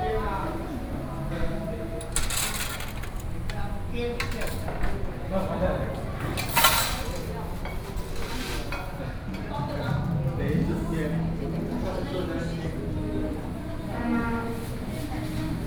新興村, Chihshang Township - In the restaurant
In the restaurant
7 September 2014, 12:43